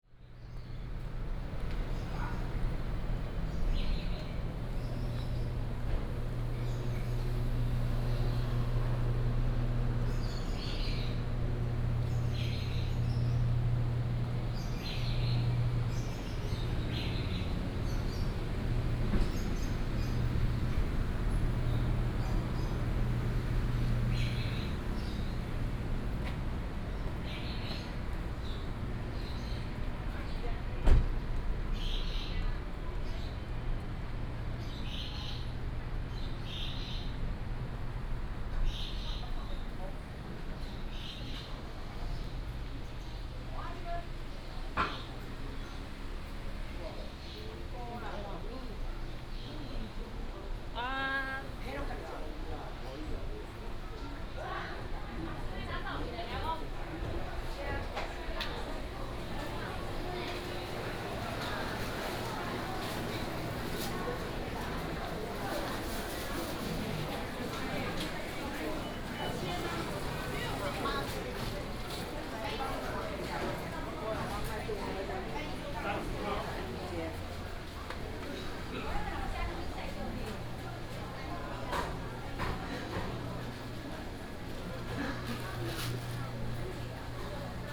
{
  "title": "三德民有零售市場, Bade Dist., Taoyuan City - Walking in a small alley",
  "date": "2017-08-13 11:05:00",
  "description": "Walking in a small alley, traffic sound, Traditional market, sound of birds",
  "latitude": "24.93",
  "longitude": "121.30",
  "altitude": "148",
  "timezone": "Asia/Taipei"
}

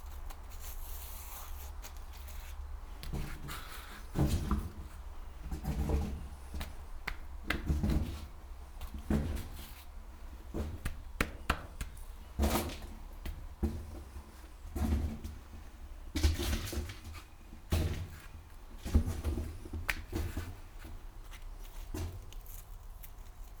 {
  "title": "forest near Bonaforth, Deutschland, tunnel under railway, TunnelWalkNoise - TunnelWalkNoise",
  "date": "2014-06-24 18:20:00",
  "description": "small tunnel under railroad with strange resonance, 35 meters long, 1,5 meter wide, at one end 3 meters high, other end 1.6 meters high. Slowly walking through the tunnel making noise. Recorded with binaural microphones (OKM).",
  "latitude": "51.40",
  "longitude": "9.61",
  "altitude": "147",
  "timezone": "Europe/Berlin"
}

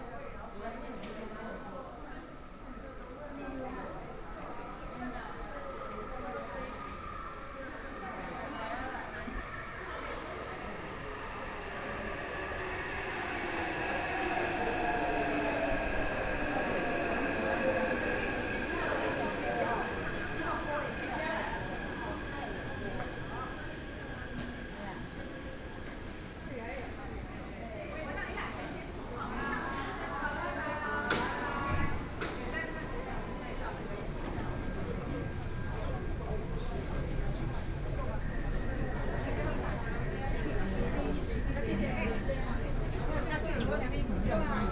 {
  "title": "Guandu Station, Taipei City - In the Station",
  "date": "2014-04-22 15:38:00",
  "description": "In the station platform, Children crying, Sound broadcasting, The distant sound of firecrackers, Train stops\nBinaural recordings, Sony PCM D50 + Soundman OKM II",
  "latitude": "25.13",
  "longitude": "121.47",
  "altitude": "10",
  "timezone": "Asia/Taipei"
}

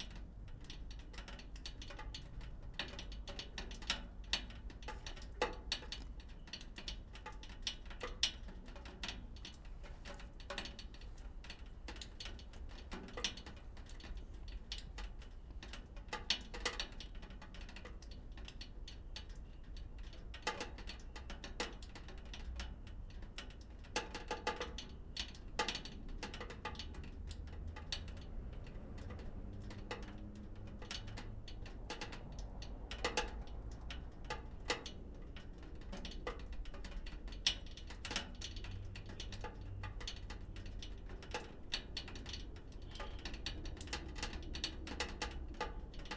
{
  "title": "Sint-Jans-Molenbeek, Belgium - Sonorous drainpipe with snow melting in it",
  "date": "2013-03-24 18:05:00",
  "description": "This sound is produced by a large fixture halfway down a thick and leaky drainpipe on an old building. It snowed the day before this sound was heard, so perhaps it is produced by something melting slowly further up the pipe? The recording was made by placing the EDIROL R09 on a ledge part-way up the pipe. A very slight high-pitched/treble-heavy trasmitted static sound is coming from further up the street, where there was a broken intercom.",
  "latitude": "50.85",
  "longitude": "4.32",
  "altitude": "38",
  "timezone": "Europe/Brussels"
}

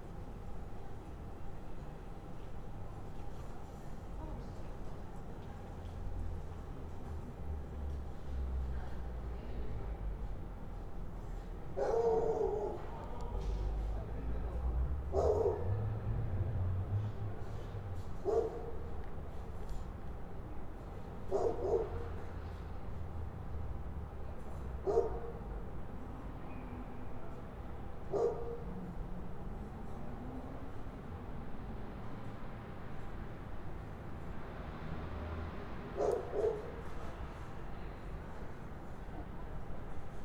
{
  "title": "Streetpark in the heart of ulm - Street Ambience Traffik Noise Dishes People Talking",
  "date": "2012-11-16 21:20:00",
  "latitude": "48.40",
  "longitude": "9.99",
  "altitude": "479",
  "timezone": "Europe/Berlin"
}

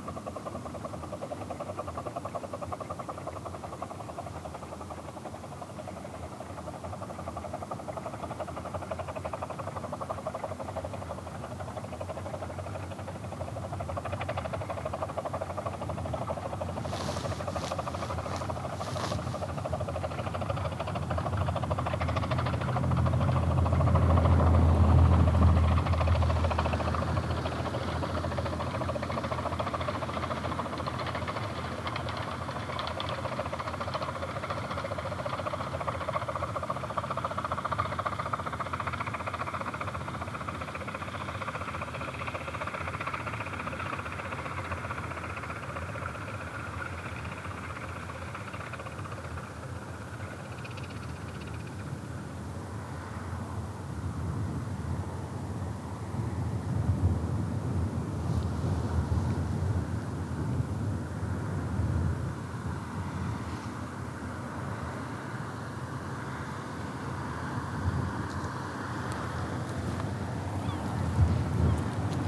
{
  "title": "Dungeness National Nature Reserve, Romney Marsh, Kent, UK - The miniature locomotive running on the Romney Hythe and Dymchurch Railway line",
  "date": "2015-01-31 14:21:00",
  "description": "As children we often travelled on the Romney, Hythe & Dymchurch Railway. It had everything my dad loved about a family outing; bleak marshy landscapes; a nuclear power station on the horizon; the sea; an old lighthouse; and a miniature steam train. In this recording you can hear the train just chuffing along the tracks rather distantly from where Mark and I were stood a few weekends back - alas, the beauteous mournful TOOTS of the train echoing against the amazing towers of the nuclear power station eluded me. Everytime the train went TOOT my recorder was in my pocket, and long stints of standing and waiting for the train never yielded a single sound. But here it is, chuffing along the tracks in the bleakest and most wondrous atmosphere of Dungeness.",
  "latitude": "50.92",
  "longitude": "0.98",
  "altitude": "5",
  "timezone": "Europe/London"
}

